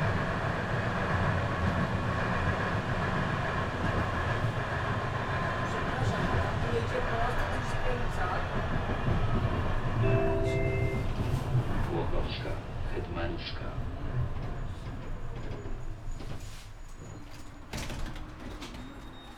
riding two stops on an old, rattling tram towards Lazarz district. (sony d50)